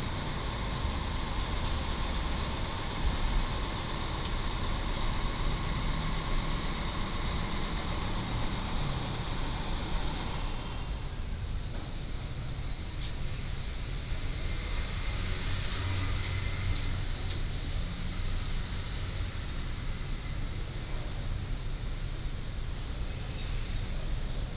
Sec., Jianguo S. Rd., Da'an Dist. - Small park
Small park, Traffic Sound, Far from the construction site
Taipei City, Taiwan